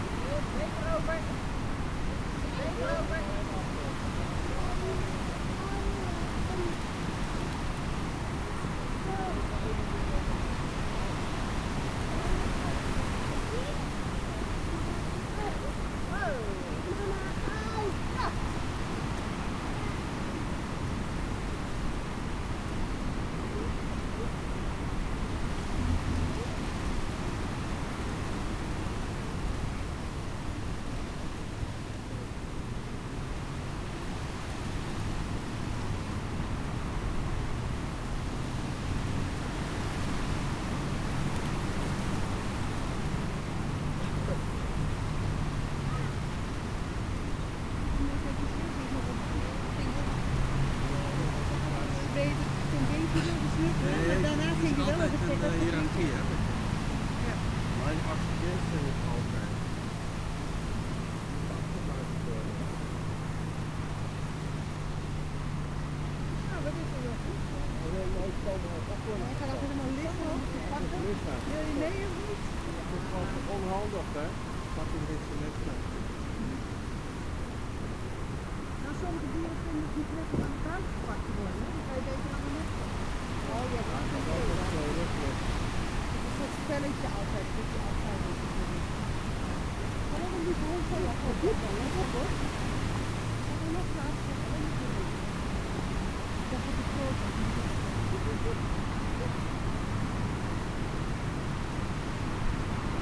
laaksum: wäldchen - the city, the country & me: copse
wind blowing through the trees, voices
the city, the country & me: july 2, 2011
Warns, The Netherlands